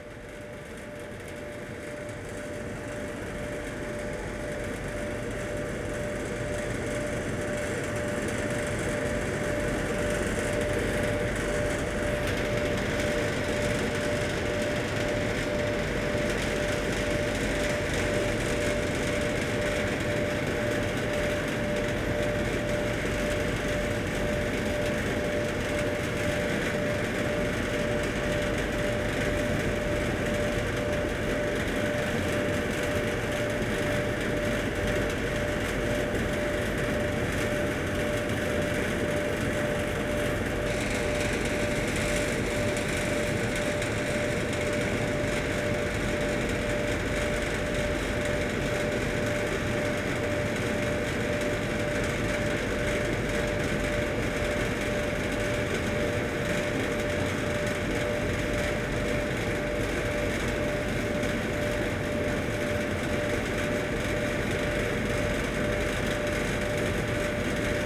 Gyé-sur-Seine, France - Vineyard
If you think vineyard is pastoral, it's only on the photos. This is the real soundscape of this kind of landscape : enormous air-conditioning systems and big noise everywhere. Here, it's a walk near a shed.
1 August